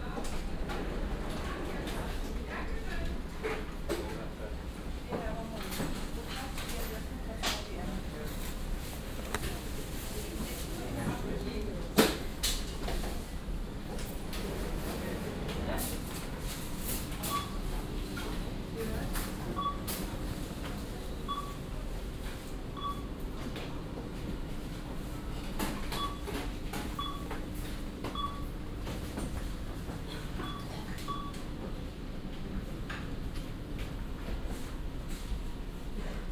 morgens im drogeriemarkt, einkaufswagen, kundengespäche, schritte, kinderschreien, das piepen der kasse
soundmap nrw - social ambiences
siebenmorgen, drogeriemarkt